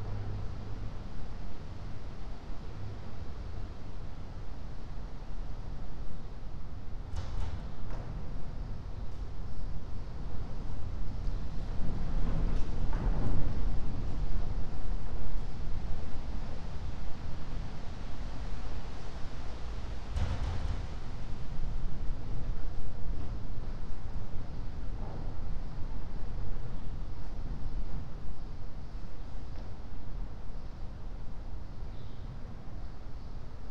Casa Tatu, Montevideo - ambience, wind
Casa Tatu, Montevideo
(remote microphone: Raspberry Pi Zero + IQAudio Zero + AOM5024HDR)